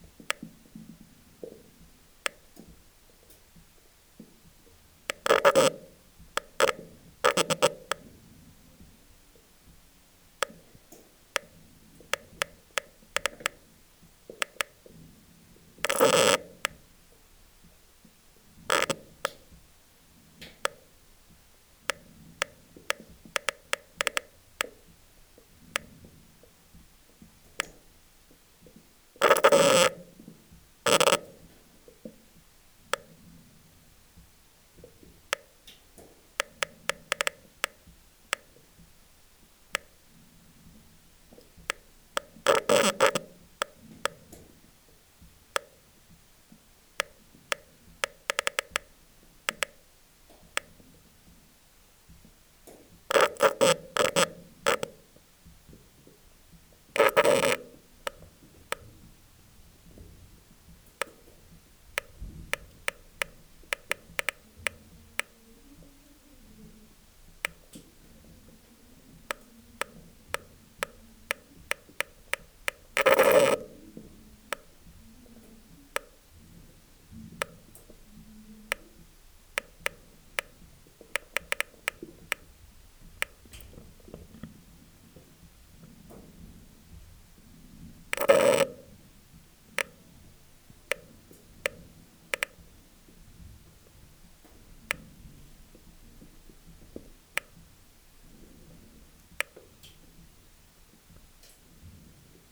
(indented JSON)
{
  "title": "Neufchâteau, Belgique - Abandoned slate quarry",
  "date": "2018-06-09 11:00:00",
  "description": "How to explain this sound ?! It's quite complicate.\nWe are in an underground slate quarry. It's a dead end tunnel.\nIn aim to extract the bad rocks, miners drill into the stone. They make a long drilling, diameter 3 cm, lenght 4 meters. At the end of the drilling, they put some explosive.\nHere, it's a drilling. As it was the end of the quarry (bankruptcy), they didn't explode the rocks. So, the long drilling remains, as this, since a century.\nInside the stone, there's a spring.\nWater is following a strange way inside the fracking.\nThis is the sound of the water inside the drilling.",
  "latitude": "49.84",
  "longitude": "5.41",
  "altitude": "414",
  "timezone": "Europe/Brussels"
}